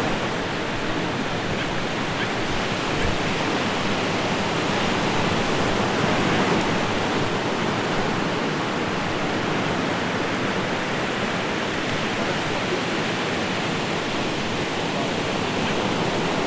Berriedale Ave, Hove, UK - Portslade beach
Foggy, rainy afternoon in January with friends at the seafront.
England, United Kingdom, 8 January, ~14:00